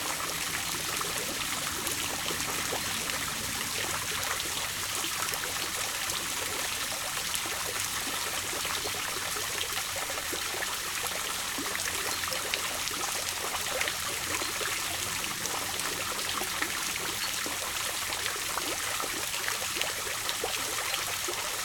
Reinhardswald, Rundweg Nr 6 von Schneiders Baum Wasserbecken
gemeindefreies Gebiet, Germany, 2 October, ~16:00